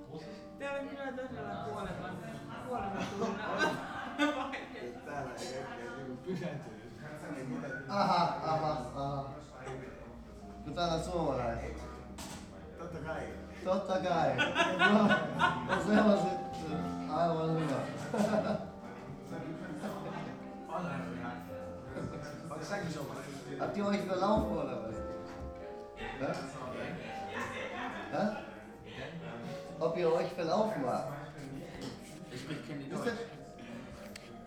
the city, the country & me: november 3, 2011